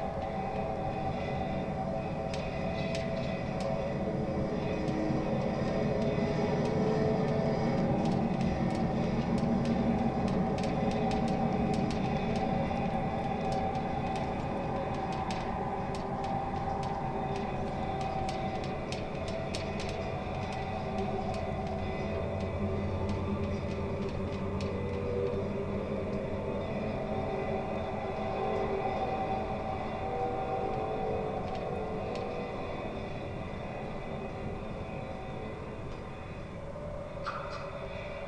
amazing amalgam of sounds from a pedestrian suspension bridge on Princes Island Calgary Canada